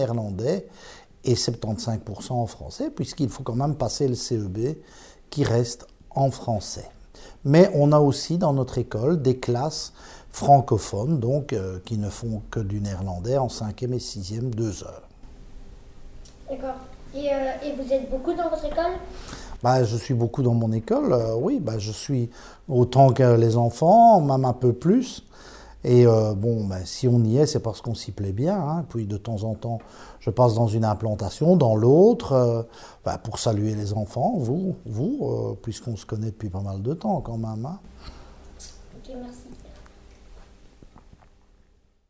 Court-St.-Étienne, Belgique - The school director
Children ask questions to their school director, as these children want to learn how to become a press reporter.